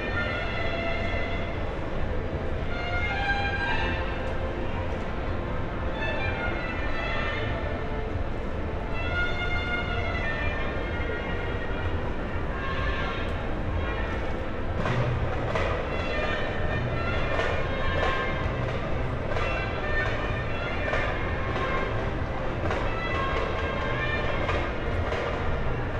on a small staircase on the roof of the shopping mall, church bells, balkan orchestra in the pedestrian zone
the city, the country & me: september 27, 2013